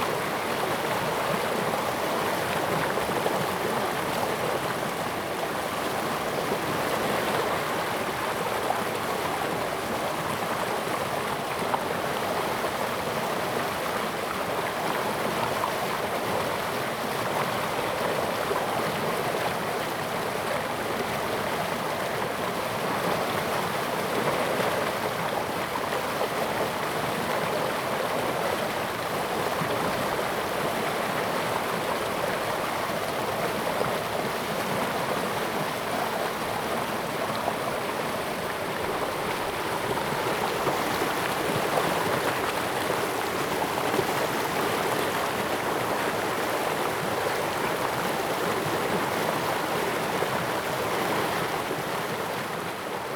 種瓜坑, 成功里, 埔里鎮 - Brook
Brook, In the river, stream
Zoom H2n MS+XY